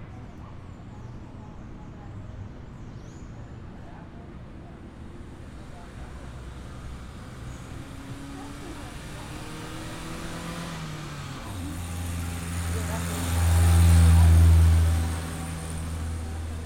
Se aprecian los sonidos de los vehículos que suben y bajan la loma
además de los pasos de algunas personas y algunas voces.